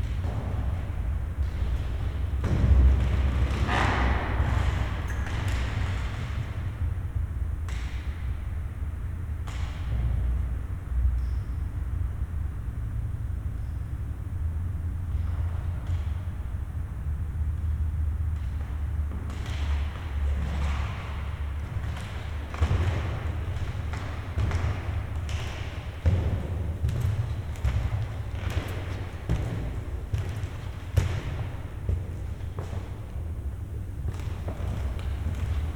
Colloredo-Mansfeld Palace Praha, Česká republika - In the Dancing Hall
The dance hall of the half-forgotten Baroque palace near Charles Bridge. It was built around 1735 for the Prince Vinzenz Paul Mansfeld. Sculptures on the portal and a fountain with a statue of Neptune in the courtyard were most likely made in Matiáš Braun’s workshop. In mid-19th century a neighbouring house was attached to the Palace and a passage was created on the right side of the main façade.
Praha-Old Town, Czech Republic